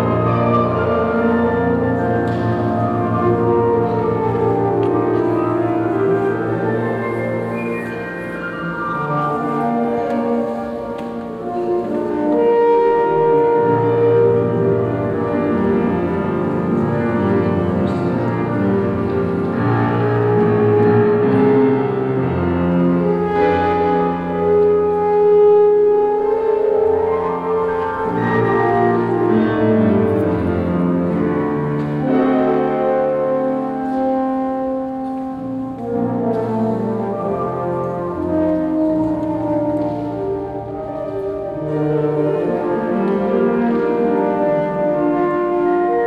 Stadtkern, Essen, Deutschland - essen, evangelian market church, vesper
In der evanglischen Marktkirche zur samstäglichen nachmittags Vesper. Der Klang eines musikalischen Duets im Kirchenraum - Bünkerücken und leise Schritte.
Inside the evangelian markez church at a saturday vesper . The sound of two musicians playing inside the church.
Projekt - Stadtklang//: Hörorte - topographic field recordings and social ambiences
Essen, Germany, 26 April 2014